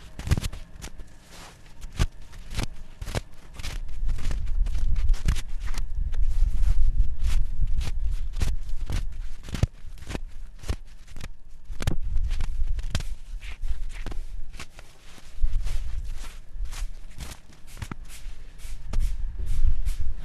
his file was recorded during one of very cold December early evening in the courtyard of Skolska 28 Gallery. In my headphones I was listening one recording, a walk along the rural farm (former cow house) in Vysocina region. Within the frame of the project 4 energies (see the link below for more information), it was recorded several interpretations by various musicians and with different instruments. In this case I used the snow as an instrument. In the background you can hear ambient of Prague city and breaking big icicles.